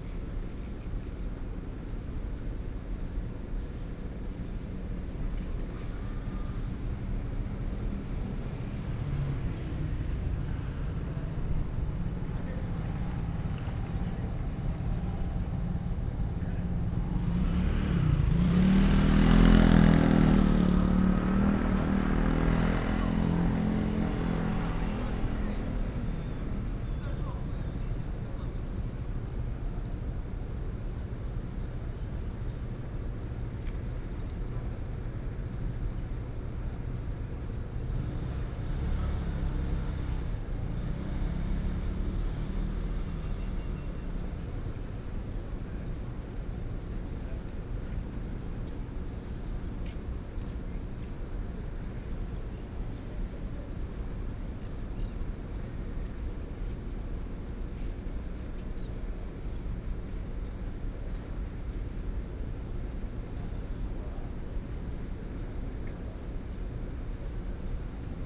和一路131巷, Keelung City - At the pier
At the pier, Fishing, Old people